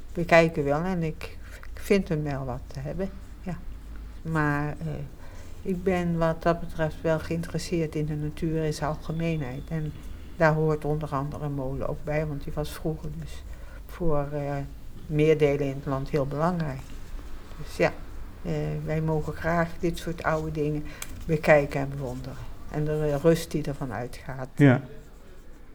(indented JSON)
{
  "title": "geprek met Antoinette over de geluiden van de Stevenshof",
  "date": "2011-09-03 14:04:00",
  "description": "Antoinette praat over de molen en de geluiden van de Stevenshof\ntalk with inhabitant about the sounds of the Stevenshof",
  "latitude": "52.15",
  "longitude": "4.45",
  "altitude": "1",
  "timezone": "Europe/Amsterdam"
}